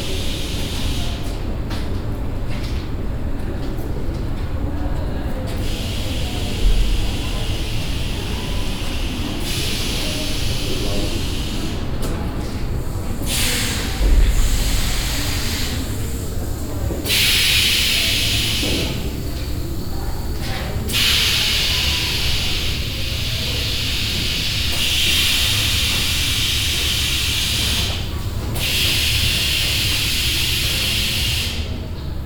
Ehrenfeld, Köln, Deutschland - wohn-bar - passagen exhibtion - pneumatic lamps
At an exhibition room of the wohn-bar during the passagen 2014. The sound of a pneumatic neon light installation.
soundmap nrw - art spaces, topographic field recordings and social ambiences
Cologne, Germany, 18 January 2014